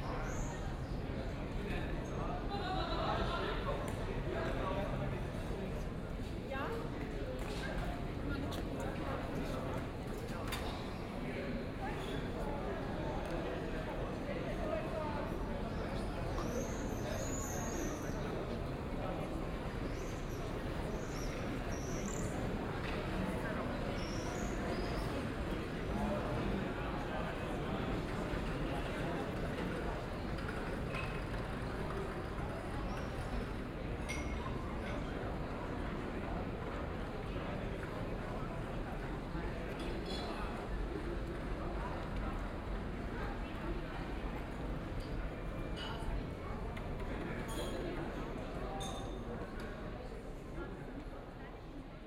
Aarau, Metzgergasse, Schweiz - Metzgergasse
Continuation of the evening stroll up the Metzgergasse, some music from a bar changes the sound of the street
Aarau, Switzerland, 28 June 2016